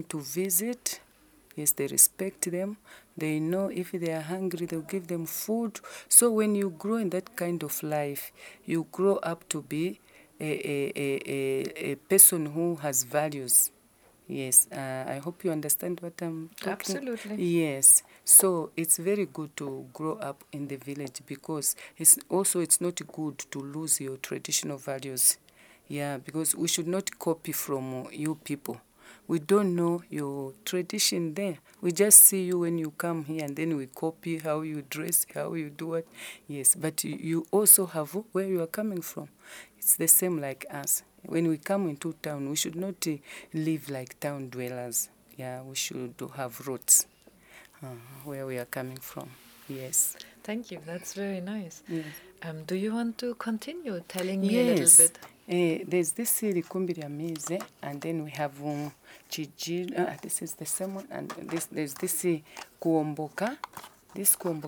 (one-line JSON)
{"title": "Mass Media Centre, ZNBC, Lusaka, Zambia - Kumbuka, crossing the Zambezi...", "date": "2012-07-19 15:39:00", "description": "Mrs. Namunkolo continues describing in detail the “Kumbuka” ceremony of the Lozi people a ritual crossing of the Zambezi river twice a year by the King and the royal household…\nThe entire playlist of recordings from ZNBC audio archives can be found at:", "latitude": "-15.41", "longitude": "28.32", "altitude": "1267", "timezone": "Africa/Lusaka"}